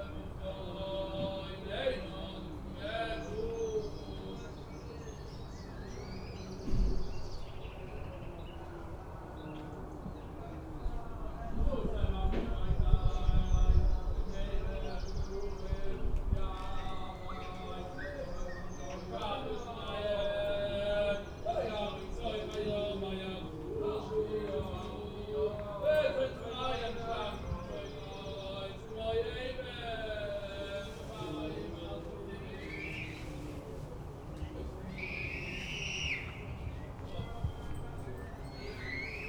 Lamorinierestraat, Antwerp, Belgium - Pesach liturgy over Corona-crisis
Orthodox Jews singing the liturgy during the Corona-crisis, with 10 men spread over gardens and balconies.
Vlaanderen, België - Belgique - Belgien